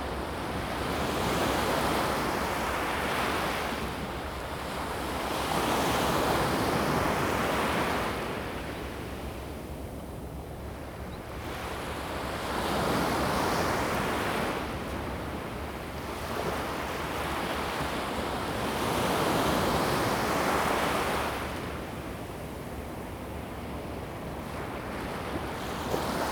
西子灣海水浴場, Kaohsiung County - Beach
Sound of the waves, Beach
Zoom H2n MS+XY
22 November 2016, 14:39